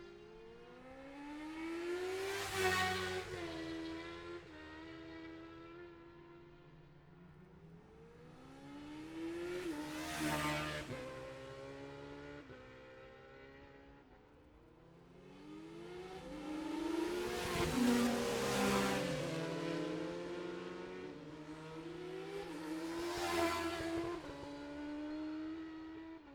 Jacksons Ln, Scarborough, UK - olivers mount road racing ... 2021 ...
bob smith spring cup ... classic superbikes qualifying ... dpa 4060s to MixPre3 ...